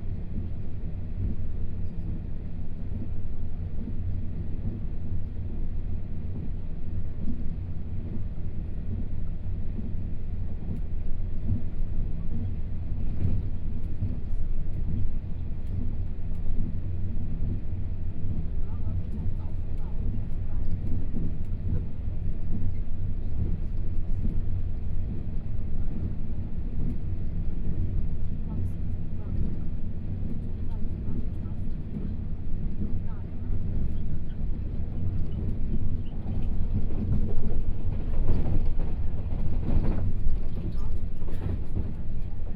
Ji'an Township, Hualien County - Accident
This paragraph recording process, Train butt process occurs, Train Parking, Binaural recordings, Zoom H4n+ Soundman OKM II